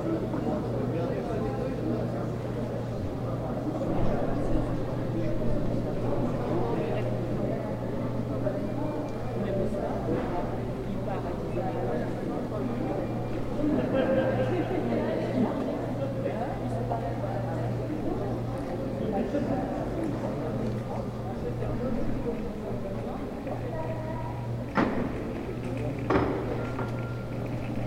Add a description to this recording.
Place métropole, à la fin passage du petit train touristique, brouhaha de terrasse de bar, travaux.